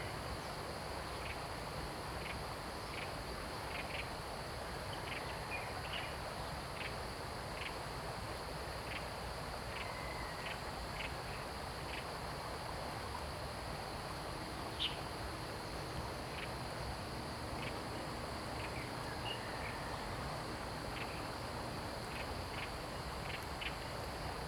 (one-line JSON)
{"title": "Shuishang Ln., Puli Township, Nantou County - Beside streams", "date": "2015-09-03 06:26:00", "description": "Beside streams, Insect sounds, Birds singing, Chicken sounds\nZoom H2n MS+XY", "latitude": "23.94", "longitude": "120.92", "altitude": "474", "timezone": "Asia/Taipei"}